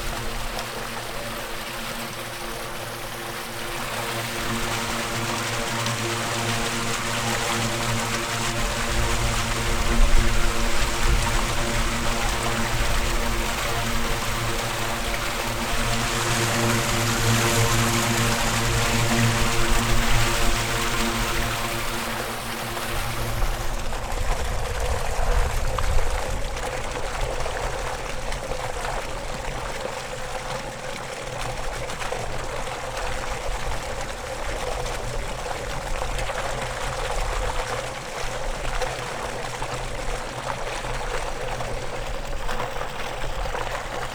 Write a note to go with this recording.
Sedimentation lakes of Počerady Power plant.